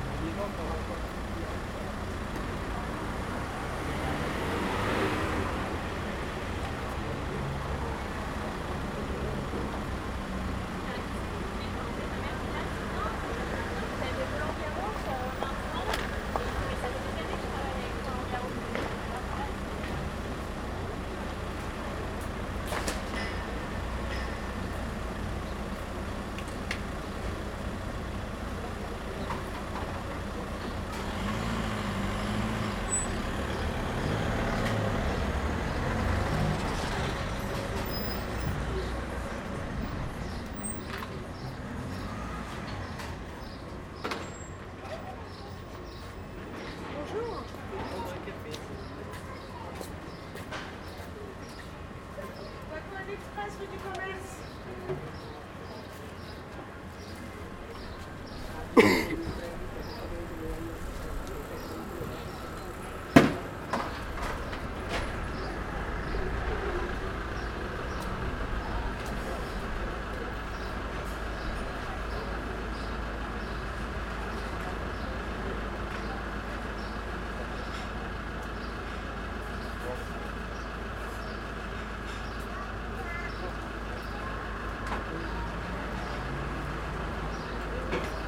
Rue du Commerce 75015 - Café recording - 'A La Tour Eiffel'
Recording the street ambience at 'A La Tour Eiffel' Café - Zoom H1
Paris, France